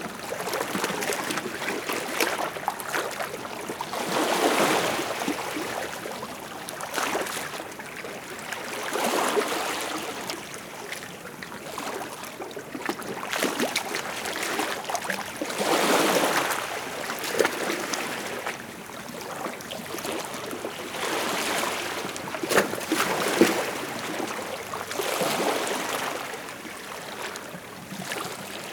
Chemins des douaniers, Dinard - Waves on the rocks in Dinard
Waves on the rocks in Dinard, under the "Chemin des Douaniers".
Sound of the wave, some background noise far away from the beach and the city.
Recorded by an ORTF Schoeps CCM4 x 2 in a Cinela Suspension and windscreen
During the workshop “Field-Recording” by Phonurgia 2020
France métropolitaine, France, 5 August, 10:44